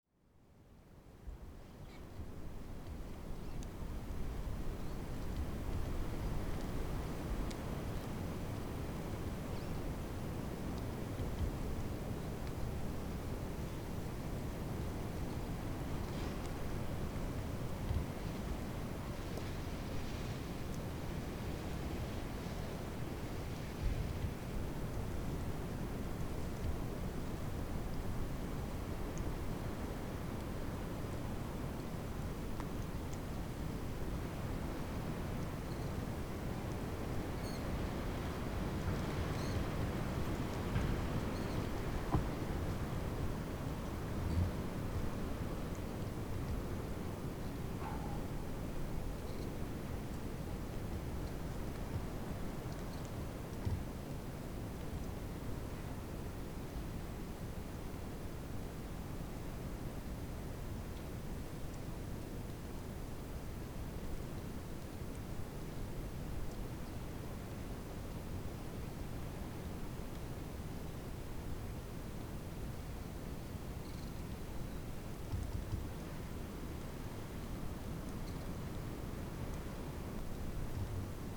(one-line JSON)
{"title": "Refugio Vicuna, Karukinka, Región de Magallanes y de la Antártica Chilena, Chile - storm log - calm forest", "date": "2019-03-07 09:18:00", "description": "Unusual calm day in the forrest near WCS research station, almost no wind.\n\"Karukinka is a private park, austral and remote, located on a peninsula of Tierra del Fuego, at the southern tip of Chile. Is difficult to access, but its almost infinite 300,000 hectares are open to those who wish to venture between their ancient lenga beech forests, its always snowy mountains and lush wetlands with native flora and fauna.\"", "latitude": "-54.14", "longitude": "-68.70", "altitude": "164", "timezone": "GMT+1"}